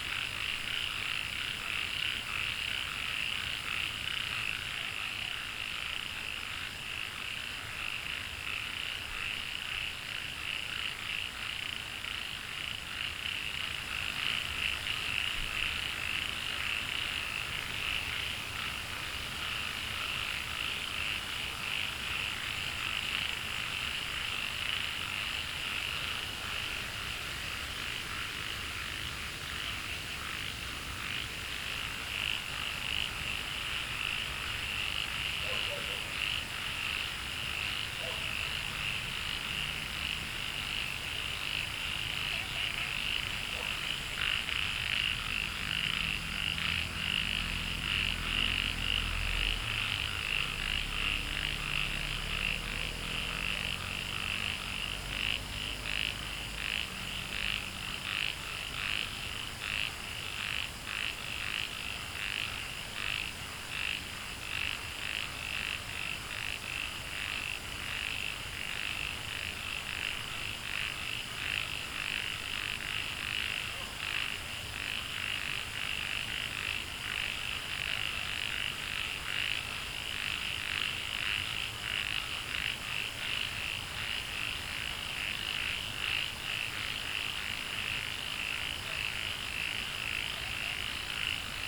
茅埔坑溪生態公園, 桃米里, Puli Township - Wetland Park
Wetland Park, Frogs chirping, Brook, Dogs barking